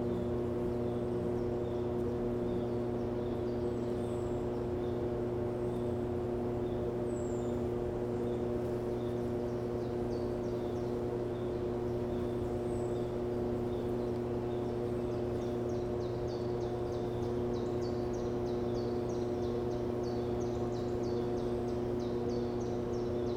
ERM fieldwork -mine air intake facility from 100 meters
ventilation air intake sound from an oil shale mine 70+ meters below
2 July 2010, ~15:00